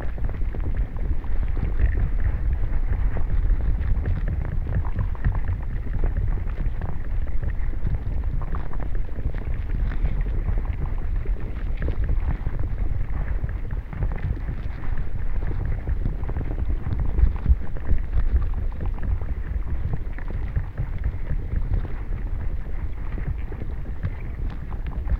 Vyžuonos, Lithuania, flooded river underwater
it is interesting to visit the same sound places in different seasons. underwater of flooded river in springtime
12 March 2019